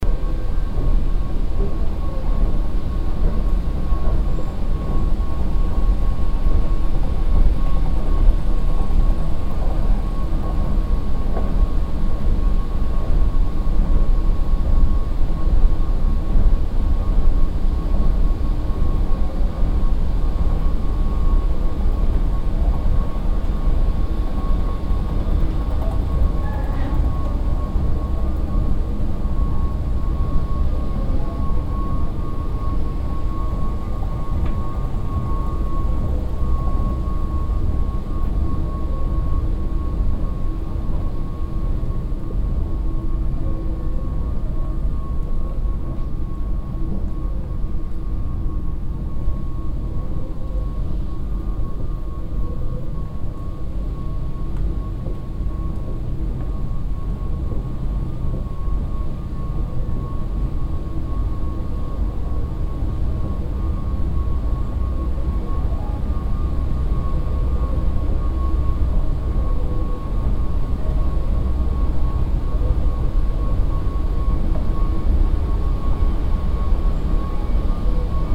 a huge moving staircase on the 4th floor of the building leading to the office level.
international city scapes - social ambiences and topographic field recordings